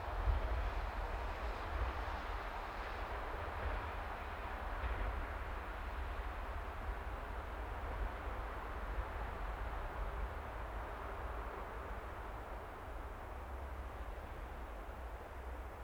Kortowo, Olsztyn, Polska - City at night